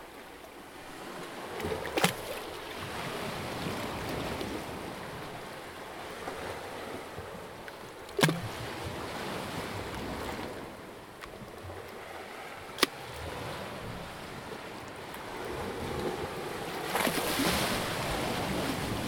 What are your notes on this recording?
The recording was performed while I was on the water.